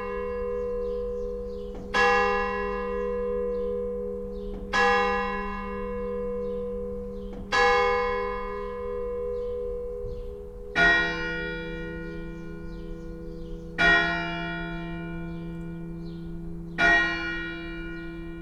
church bells at 3pm in Borschemich, a nice village in the west of germany, over 1100 years old. as many others in this area, this village will be destructed soon, eaten by the growing Garzweiler brown coal mining in the east. it's almost abandoned, only a few people left.
Erkelenz, Germany, 3 April 2012, 15:00